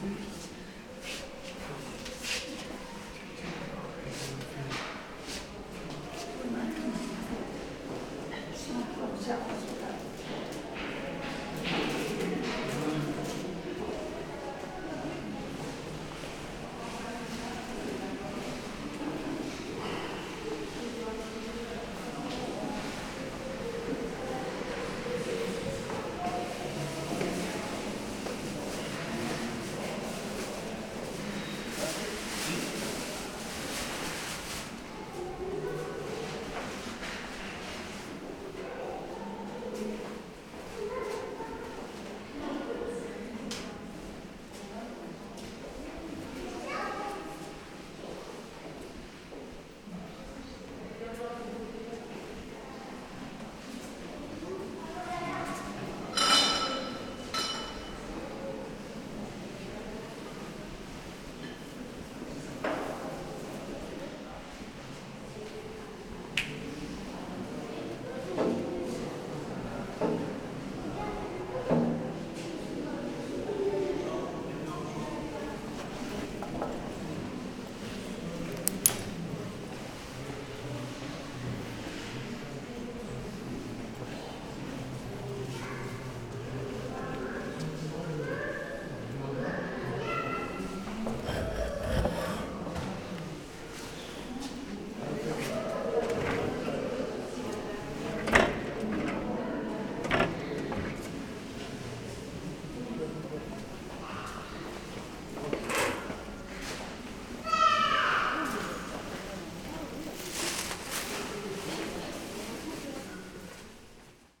Fête des Morts
Cimetière du Père Lachaise - Paris
Chambre funéraire du colombarium en sous-sol
Paris, France